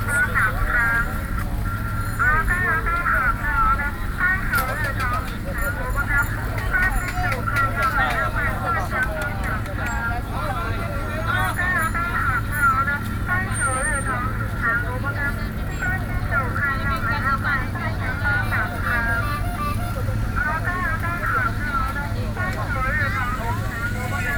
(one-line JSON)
{"title": "No., Guānhǎi Boulevard, New Taipei City - Selling snacks", "date": "2012-07-01 17:03:00", "latitude": "25.16", "longitude": "121.43", "altitude": "6", "timezone": "Asia/Taipei"}